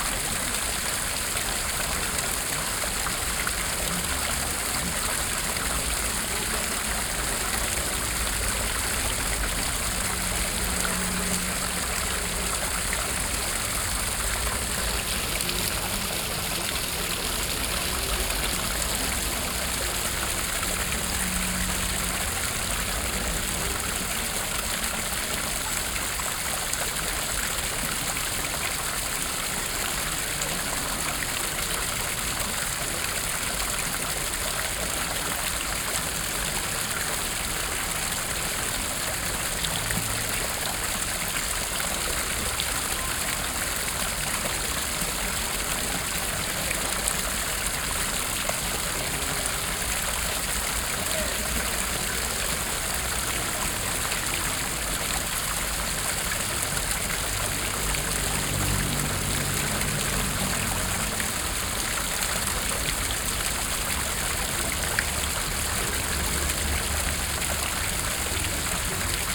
El Barri Gòtic, Barcelona, Barcelona, España - Fountain at Plaça de la Mercè
Water recording made during World Listening Day.